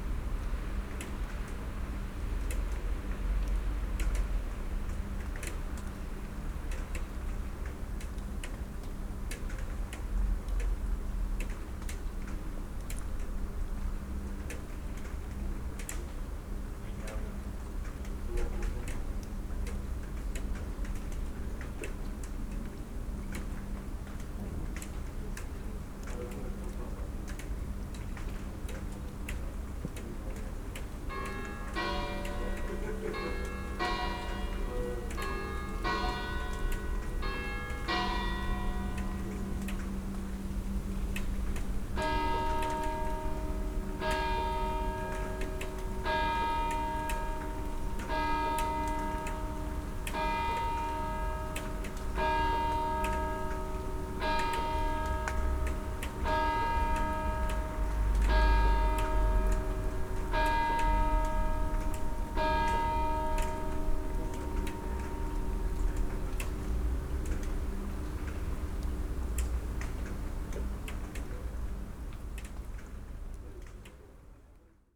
church bells and night ambience at 11pm, Karunova ulica
(Sony PCM D50, DPA4060)